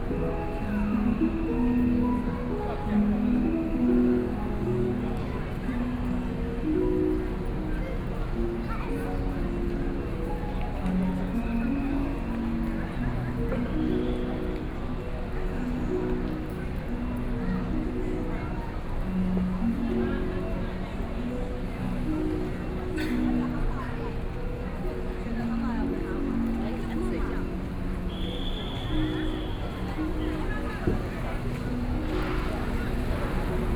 Walking in the department store area, The crowd and street music, Directing traffic whistle, Sony PCM D50 + Soundman OKM II
Taipei City, Taiwan, 19 October 2013, 18:04